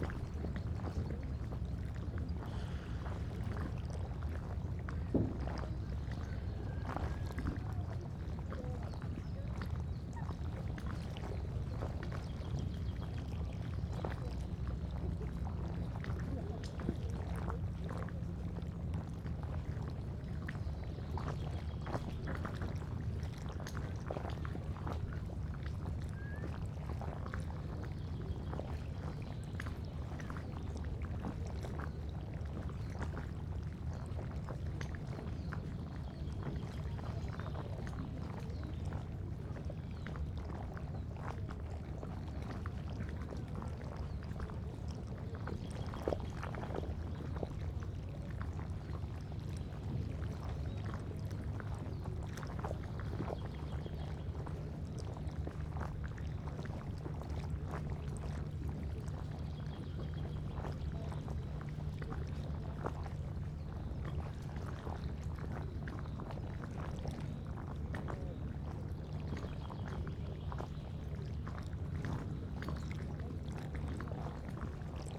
Langer See, river Dahme, near Grünau, Berlin - river side ambience
forest at lake Langer See, waves lapping gently at the bank, distant drone of a boat
(SD702, NT1A)
Berlin, Germany, 30 March